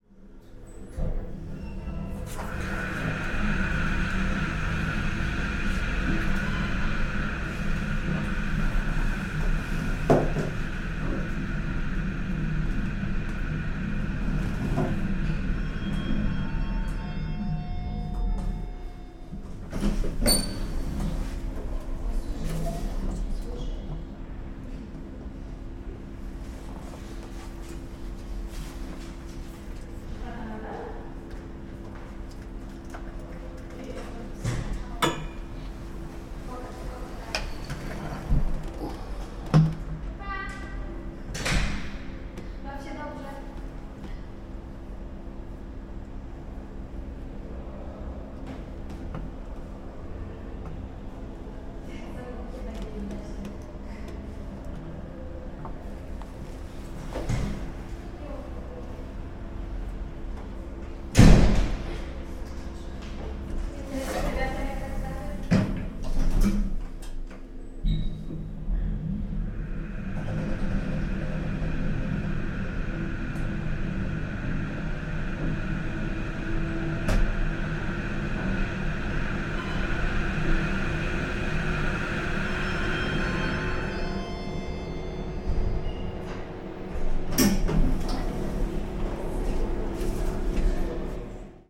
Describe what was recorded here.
recording the elevator sounds, Center of Contemporary Art Torun